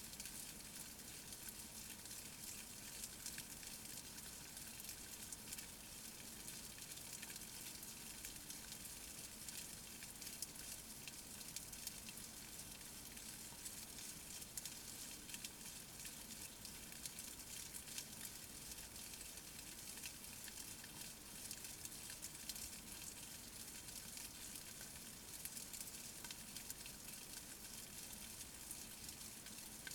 Our living room, Katesgrove, Reading, UK - silkworms in the living room
Another recording of the silkworms. They are growing very fast, and now they are bigger, their tiny feet sound louder. You can begin to hear in this recording why sericulturists refer to the restful, peaceful sound of raising silkworms, and also the comparisons of the sounds of the worms with the sound of rain. The main sound is produced by their claspers (feet) rasping against the thick mulberry leaves.